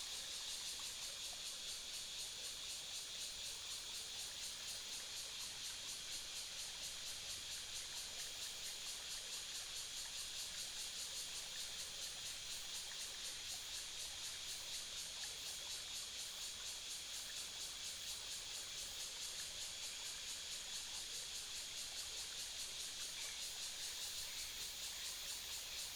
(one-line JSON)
{"title": "126縣道, Touwu Township - stream and Cicadas", "date": "2017-09-15 11:08:00", "description": "stream, Cicadas call, Birds sound, Binaural recordings, Sony PCM D100+ Soundman OKM II", "latitude": "24.58", "longitude": "120.93", "altitude": "167", "timezone": "Asia/Taipei"}